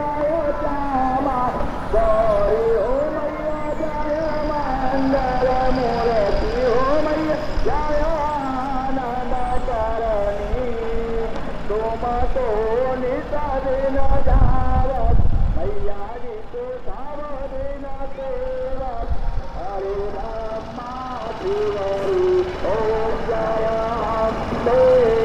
{"title": "Omkareshwar, Madhya Pradesh, Inde - Morning atmosphere", "date": "2015-10-16 11:45:00", "description": "From a rooftop: people, praises and life", "latitude": "22.24", "longitude": "76.15", "altitude": "184", "timezone": "Asia/Kolkata"}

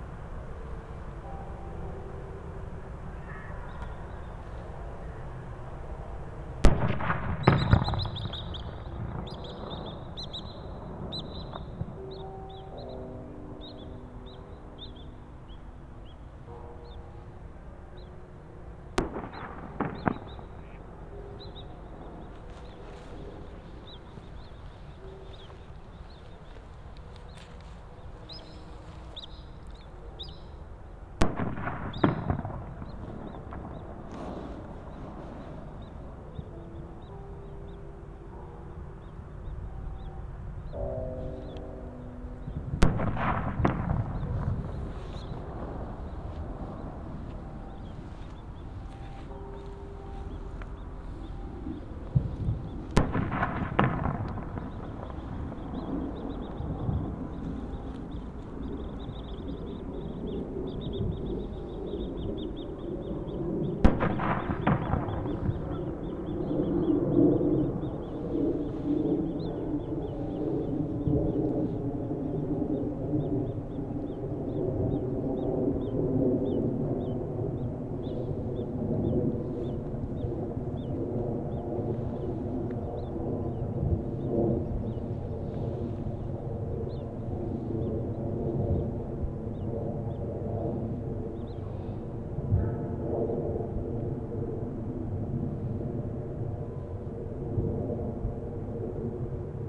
{"title": "Tateiricho, Moriyama, Shiga Prefecture, Japan - New Year 2017 Temple Bells and Fireworks", "date": "2017-01-01", "description": "New Year's Eve temple bells, car traffic, and a few trains. At midnight fireworks announce the beginning of 2017, and a jet aircraft passes overhead. Recorded with an Audio-Technica BP4025 stereo microphone and a Tascam DR-70D recorder, both mounted on a tripod.", "latitude": "35.05", "longitude": "136.01", "altitude": "107", "timezone": "GMT+1"}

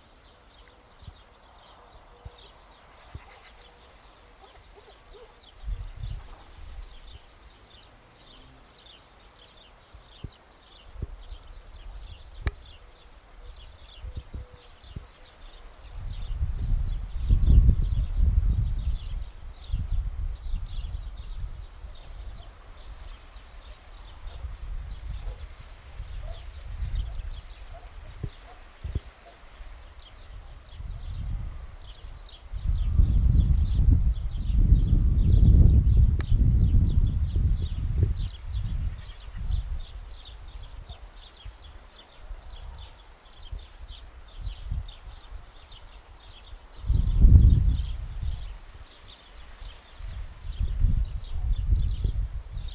{"title": "Montemor-o-Novo, Portugal - Passos", "date": "2014-06-21 15:15:00", "latitude": "38.64", "longitude": "-8.21", "altitude": "269", "timezone": "Europe/Lisbon"}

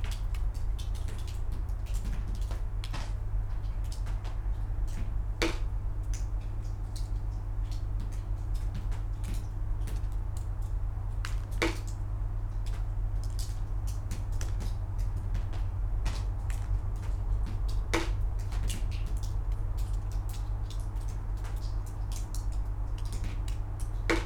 {"title": "Utena, Lithuania, abandoned soviet barrack", "date": "2016-11-20 15:30:00", "description": "raindrops in abandoned soviet barracks", "latitude": "55.51", "longitude": "25.64", "altitude": "136", "timezone": "Europe/Vilnius"}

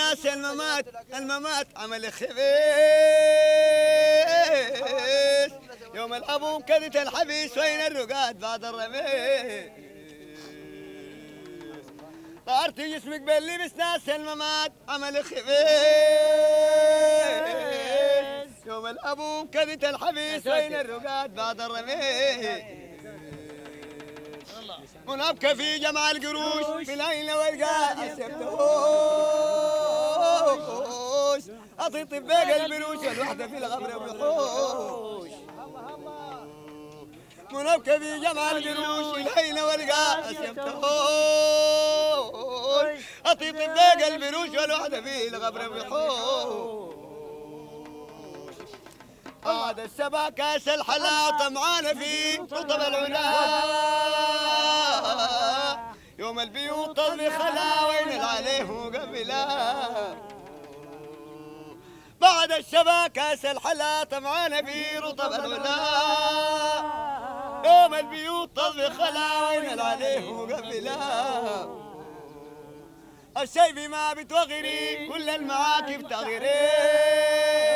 {"title": "شارع الراشدين, Sudan - song before dhikr @ tomb of Sheikh Hamed an-Neel", "date": "1987-05-08 15:56:00", "description": "In Omdourman, Sudan, lies the tomb of the sufi holy man Sheikh Hamed an-Neel. Every friday the believers flock together to sing and dance themselves in trance and experience the nearness of Allah. These recordings were in 1987, the democrativc gays in the history of Sudan. I do not know what happened with the sufi's when the fanatics took over governement.", "latitude": "15.62", "longitude": "32.46", "altitude": "389", "timezone": "Africa/Khartoum"}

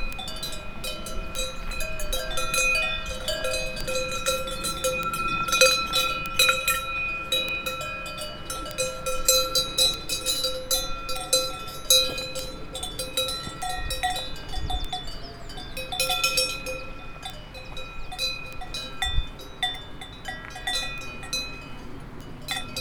{"date": "2010-07-07 16:03:00", "description": "Cow at Plan des Bos, vanoise, French Alps.\nLes vaches au Plan des Bos, dans la Vanoise.", "latitude": "45.33", "longitude": "6.69", "altitude": "1879", "timezone": "Europe/Paris"}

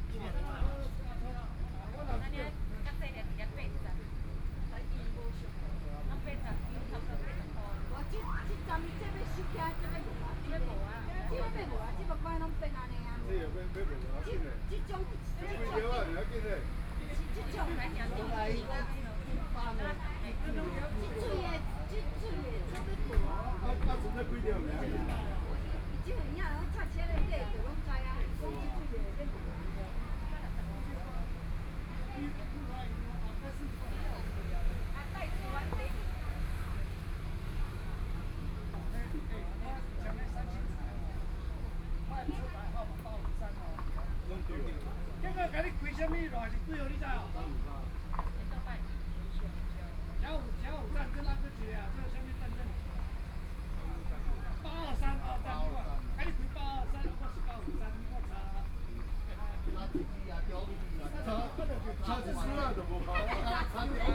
{"title": "大埤路, 冬山鄉得安村 - Chat", "date": "2014-07-27 11:15:00", "description": "Chat, Tourist, Tourist Scenic Area, Traffic Sound\nSony PCM D50+ Soundman OKM II", "latitude": "24.65", "longitude": "121.73", "altitude": "47", "timezone": "Asia/Taipei"}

